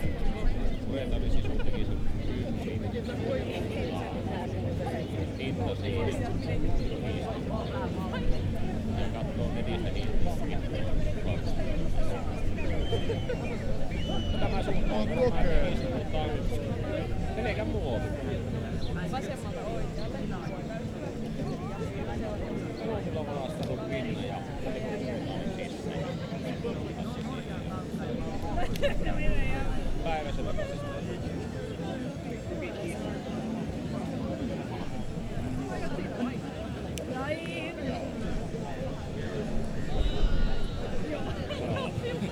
Kiikeli, Oulu, Finland - Friday evening at Kiikeli
The island 'Kiikeli' is really popular among younger folk during warm summer evenings. This time the island was full of young people spending time with their friends. Zoom H5, default X/Y module.